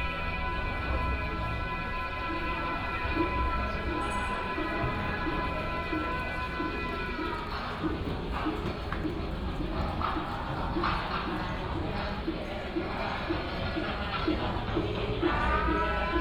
大龍峒保安宮, Taipei City - Walking in the temple
Walking in the temple, Traffic sound, sound of birds